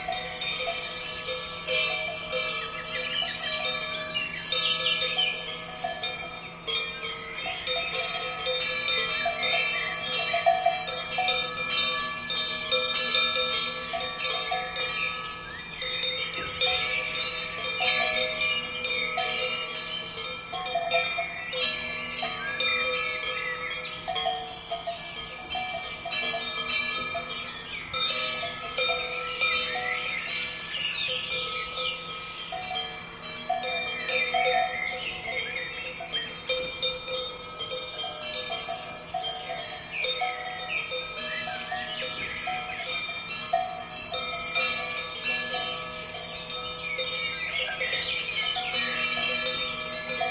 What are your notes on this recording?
Recorded with a pair of DPA 4060s and a Marantz PMD661